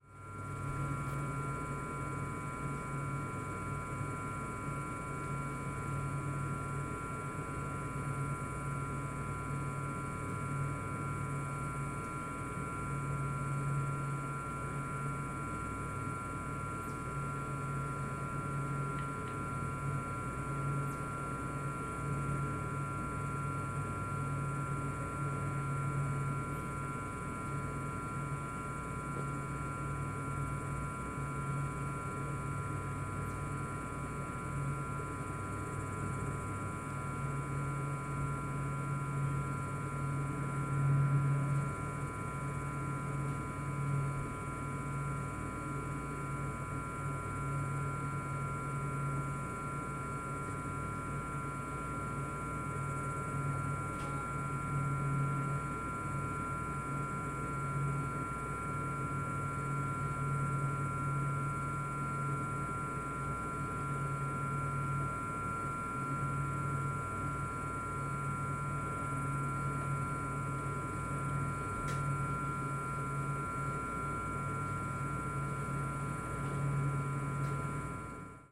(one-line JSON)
{"title": "Van Buren State Park, South Haven, Michigan, USA - Van Buren State Park", "date": "2021-07-23 14:40:00", "description": "Recording outside a building in Van Buren State Park.", "latitude": "42.33", "longitude": "-86.31", "altitude": "197", "timezone": "America/Detroit"}